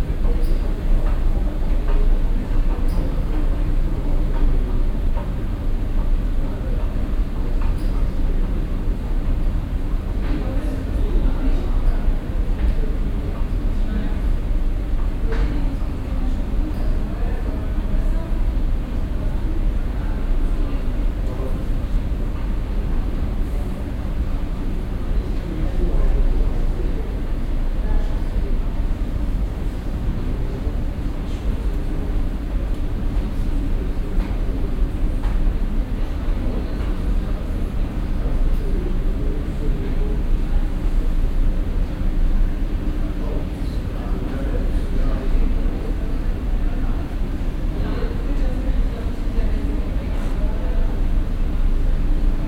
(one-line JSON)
{"title": "essen, gelände zeche zollverein, kohlenwäsche, rolltreppe", "date": "2008-11-13 15:04:00", "description": "Auf der Rolltreppe zu der von Rem Kohlhaas umgestalten ehemaligen Kohlenwäsche auf dem Gelände des Weltkulturerbes Zeche Zollverein.\nProjekt - Stadtklang//: Hörorte - topographic field recordings and social ambiences", "latitude": "51.49", "longitude": "7.04", "altitude": "55", "timezone": "Europe/Berlin"}